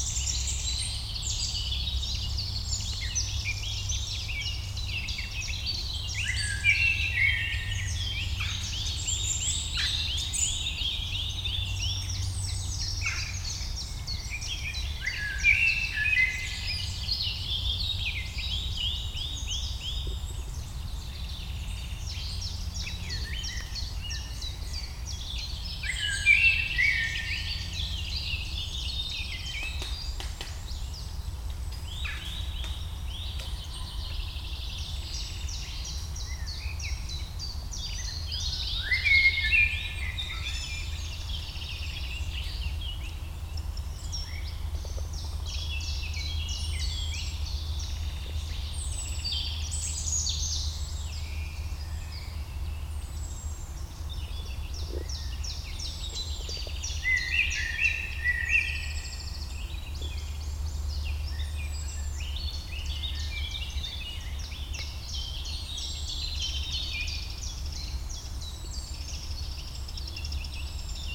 It's very uncommon to have a short time without planes and I took advantage to record the forest during this short period. The masters of the woods : Robin, Common Pheasant, Eurasian Blackcap, Wood Pigeon, Blackbird, Common Chiffchaff. Discreet : Eurasian Wren, Great Spotted Woodpecker (5:50 mn), Western Jackdaw, one human and a dog, plump mosquito on the microphone.